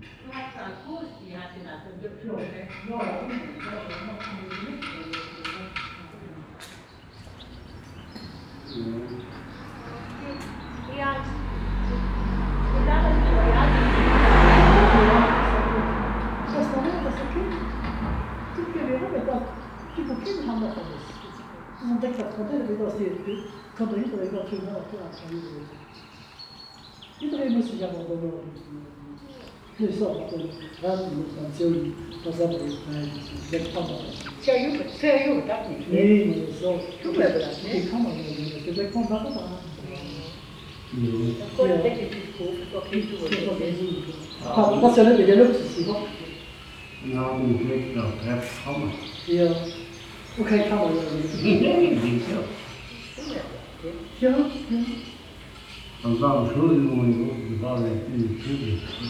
Huldange, Luxemburg - Huldange, cemetery, citizen evening talk
Am Friedhof des Ortes. Eine Gruppe von Ortsansässigen unterhält sich während der Grabpflege. Der Klang des lokalen Dialektes, Schritte und Werkzeuge auf dem Kiesweg, Vögelstimmen und vorbeifahrene Fahrzeuge.
At the town's cemetery. A group of local citizen talking while taking care on their plots. The sound of the local dialect, steps and tools on the gravel ground, birds and passing by traffic.
Troisvierges, Luxembourg, 4 August 2012, 19:15